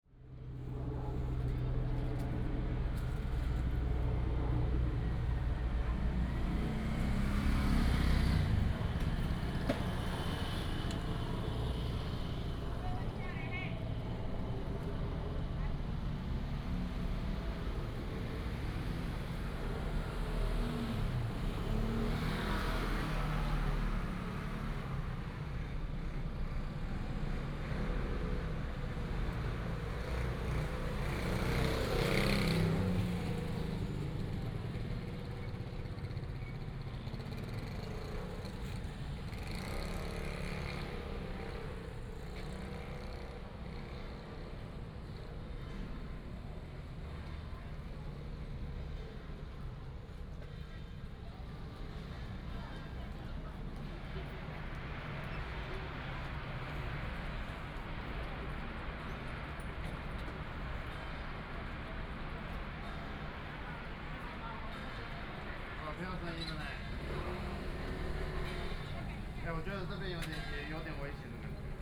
虎尾新吉里, Huwei Township - At the intersection
temple fair, Baishatun Matsu Pilgrimage Procession, Firecrackers and fireworks
Yunlin County, Huwei Township, 3 March 2017